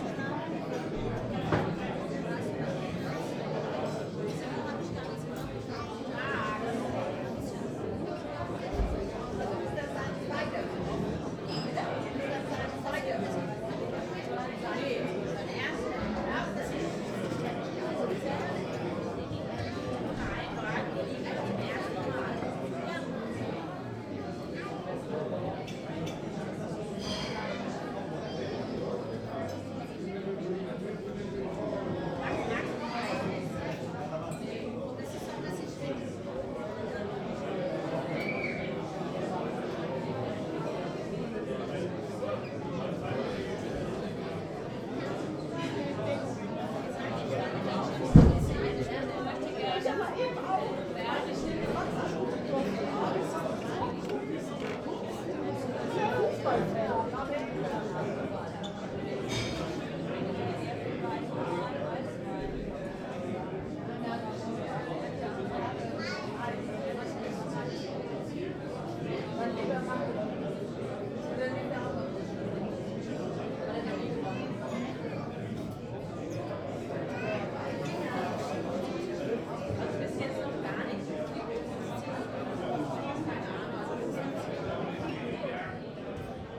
mainz, mombacher straße: portugiesisches vereinsheim u.d.p. - the city, the country & me: portuguese restaurant
portuguese restaurant of sports club U.D.P. (uniao desportiva portuguesa de mainz 1969), nice ambience and great food
the city, the country & me: october 16, 2010
Deutschland, 16 October, ~20:00